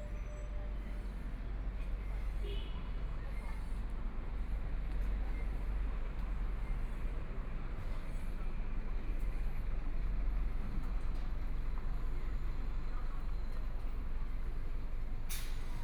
Quxi Road, Huangpu District - on the road
Walking on the road, Follow the footsteps, Traffic Sound, Students voice conversation, Pulling a small suitcase voice, Binaural recording, Zoom H6+ Soundman OKM II